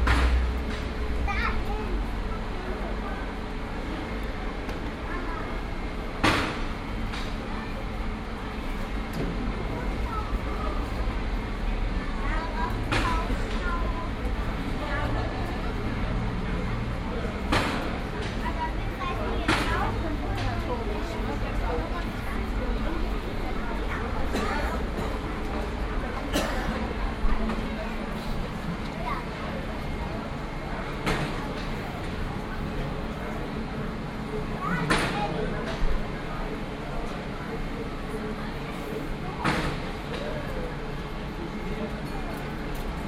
Löhrrondell, Children's day, Koblenz, Deutschland - Löhrrondell 10
Binaural recording of the square. Second day Tenth of several recordings to describe the square acoustically. You hear a sound installation on the floor and a 'Hau den Lukas'.